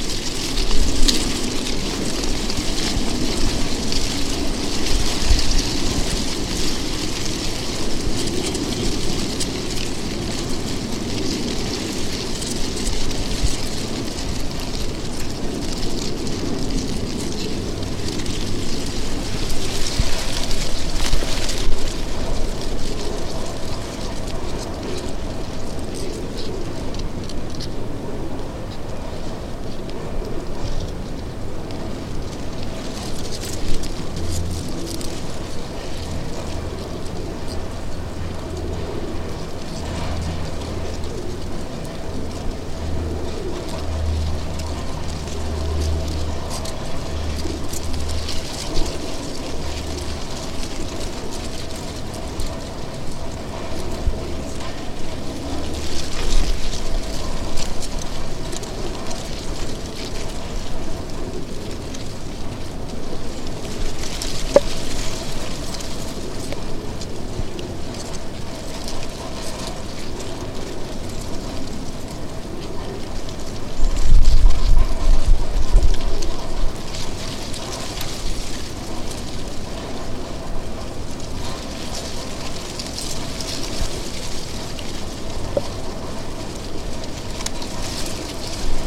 Ambit of the Monastery of St. Jilji
Wind in dry leaves in the bus in the middle of the ambit of the Monastery of Dominicans at Old Town.
Prague 5-Old Town, Czech Republic, 14 January 2011, 12:35